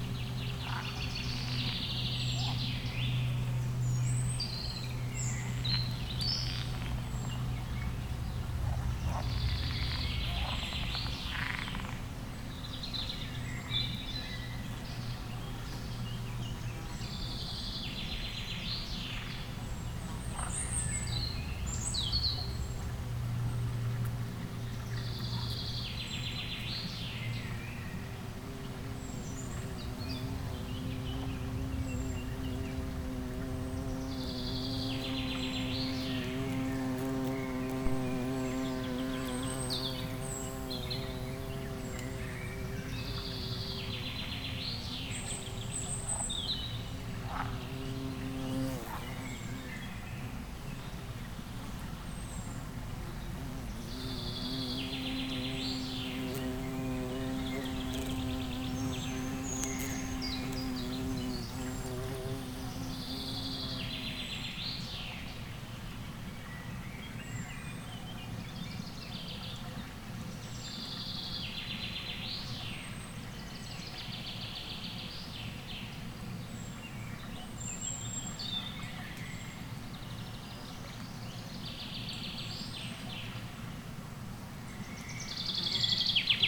pond, late afternoon, frogs and bumblebees